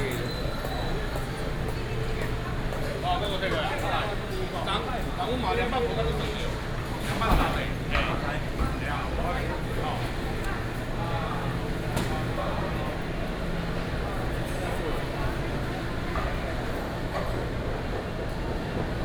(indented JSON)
{
  "title": "十甲旺市場, East Dist., Taichung City - Walking in the market",
  "date": "2017-03-22 09:12:00",
  "description": "Walking through the market",
  "latitude": "24.15",
  "longitude": "120.70",
  "altitude": "94",
  "timezone": "Asia/Taipei"
}